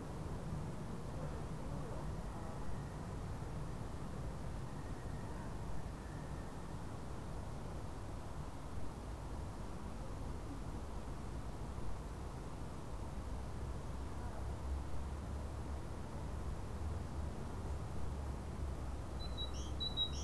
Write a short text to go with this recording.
singing bird at the grave of herbert marcuse, the city, the country & me: april 10, 2011